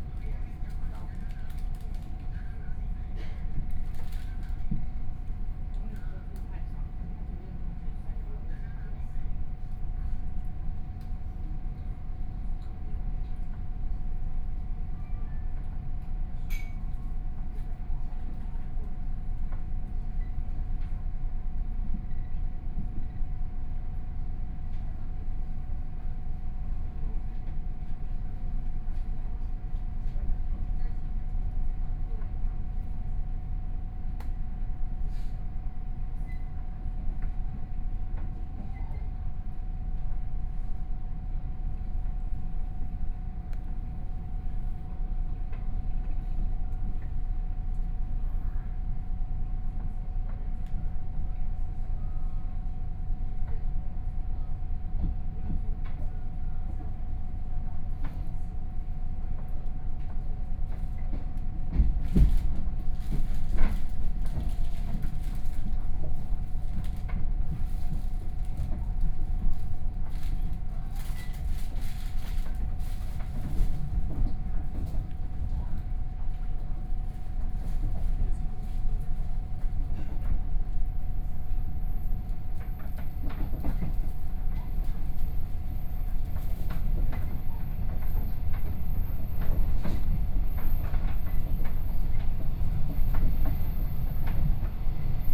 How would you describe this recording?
from Chenggong Station to Changhua Station, Zoom H4n+ Soundman OKM II